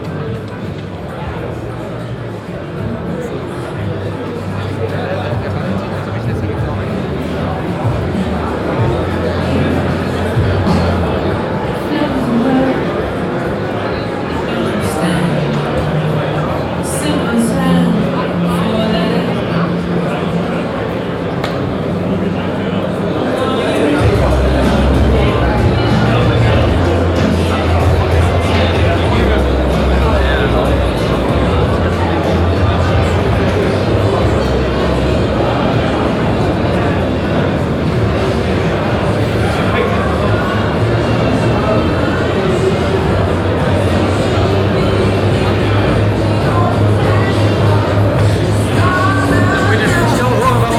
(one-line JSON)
{"title": "Westend-Süd, Frankfurt am Main, Deutschland - frankfurt, fair, hall 6", "date": "2012-03-28 10:50:00", "description": "Inside hall 6 of the frankfurt fair areal. Walking through the electronic devices department of the music fair. The sound of people crossing and talking overshadowed by different kinds of music from the exhibitor stands.\nsoundmap d - social ambiences and topographic field recordings", "latitude": "50.11", "longitude": "8.65", "altitude": "110", "timezone": "Europe/Berlin"}